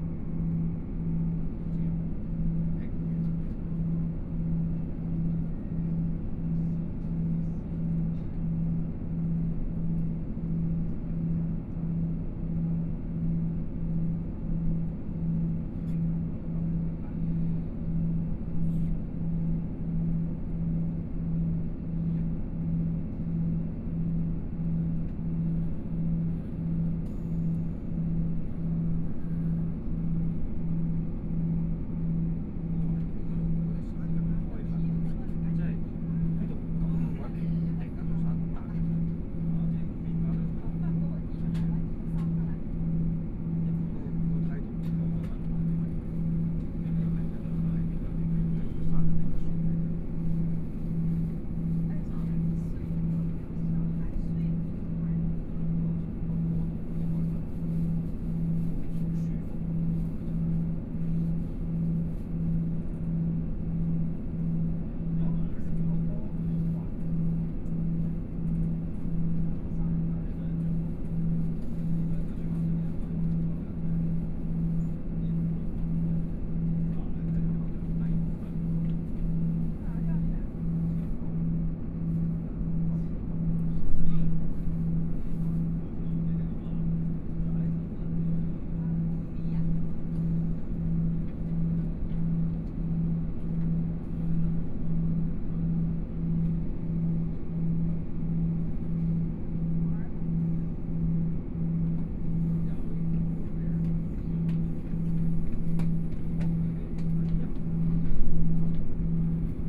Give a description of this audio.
Noise inside the train, Train voice message broadcasting, Dialogue between tourists, Mobile voice, Binaural recordings, Zoom H4n+ Soundman OKM II